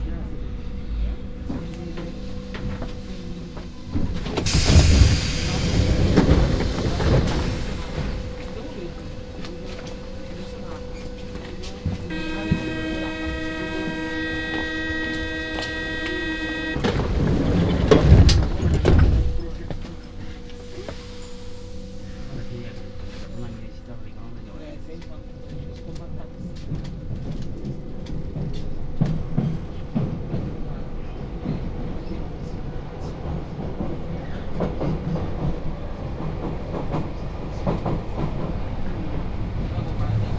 "Friday morning metro and soundwalk in Paris in the time of COVID19": Soundwalk
Friday, October 16th 2020: Paris is scarlett zone for COVID-19 pandemic.
One way trip walking from Airbnb flat to the metro 7 from Stalingrad to Jussieu and short walking to Sorbonne Campus for Rencontres nationales recherches en musique
Start at 8:46 p.m. end at 10:33 p.m. duration 46’37”
As binaural recording is suggested headphones listening.
Path is associated with synchronized GPS track recorded in the (kmz, kml, gpx) files downloadable here:
For same set of recording go to:
Loc=51267
Île-de-France, France métropolitaine, France, 16 October 2020, ~09:00